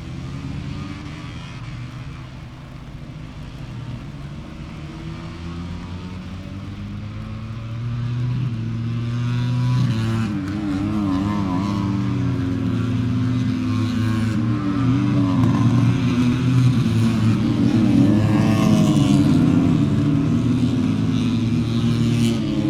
moto three qualifying ... Vale ... Silverstone ... open lavalier mics clipped to wooden clothes pegs fastened to sandwich box on collapsible chair ... umbrella keeping the rain off ... it was very wet ... associated sounds ... rain on umbrella ... music coming from onsite disco ... weather was appalling so just went for it ...